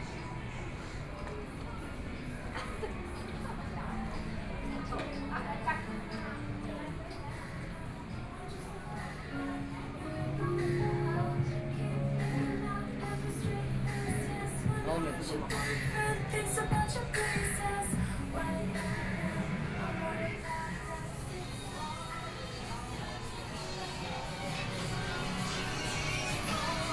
Fuxing St., Hualien City - walking in the Street
Walking through in a variety ofthe mall, Binaural recordings, Zoom H4n+Rode NT4 + Soundman OKM II
Hualian City, Hualien County, Taiwan, 5 November 2013, 14:48